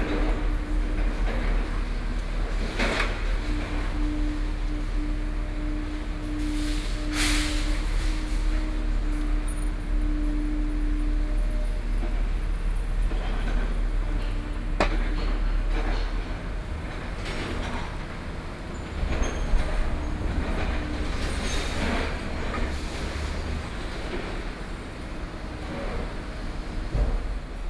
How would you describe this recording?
outside of the Elementary School, Removal of school buildings, Sony PCM D50 + Soundman OKM II